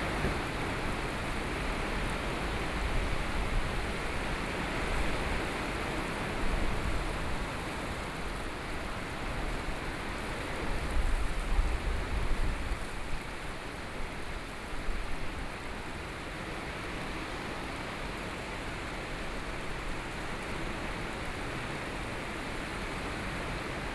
宜蘭市小東里, Yilan County - Heavy rain
Traffic Sound, Next to the railway, Heavy rain, Trains traveling through
Sony PCM D50+ Soundman OKM II
Yilan City, Yilan County, Taiwan, 22 July 2014